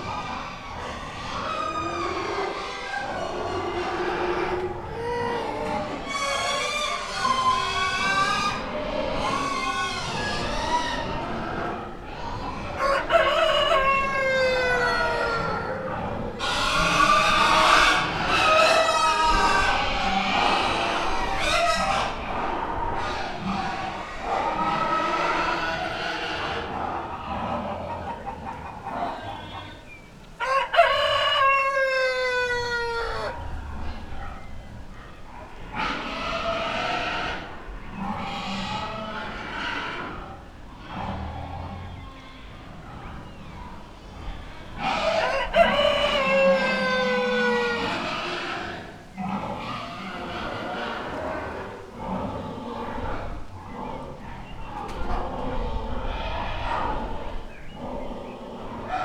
Sao Goncalo, levada towards Camacha - animal barn
passing by a building full of horribly howling farm animals. the building had no windows and was locked so i wasn't able to look inside.